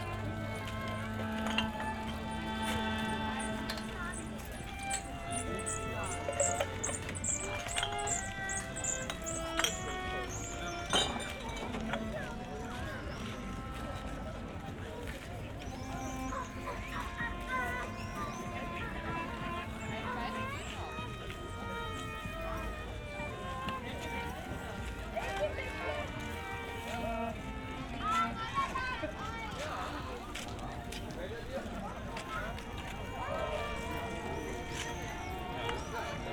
oderstraße/herfurthstraße: zufahrtstor zum flughafen tempelhof - entrance, closing time, people leaving the park
near the entrance Oderstraße, people leaving, the field closes around sunset, musicians
(SD702, Audio Technica BP4025)
Berlin, Germany